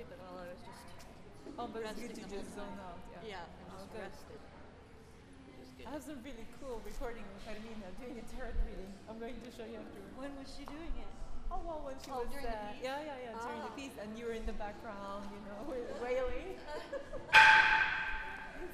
Exploring a space with strange sounds
Columbus, OH, USA